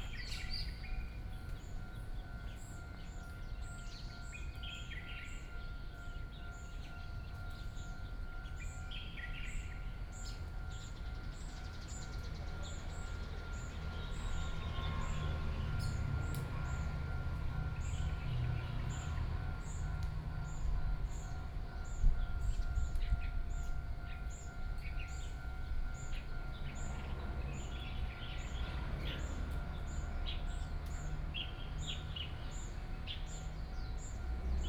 Zuanxiang Rd., 頭城鎮城北里 - Next to the railway
Next to the railway, Birdsong, Very hot weather, Traveling by train